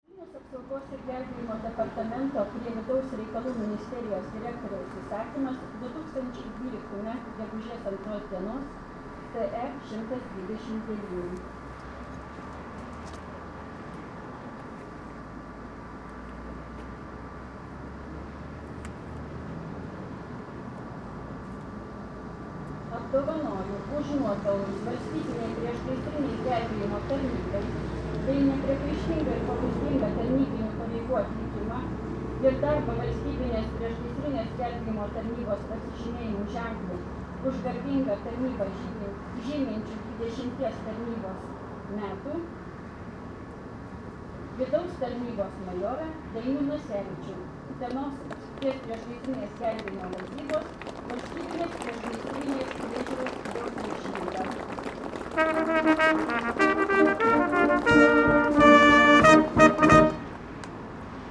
Lithuania, Utena, firefighter's day - firefighter's day
honouring firemen on Firefighter's Day
Utena district municipality, Lithuania, 4 May 2012, 10:30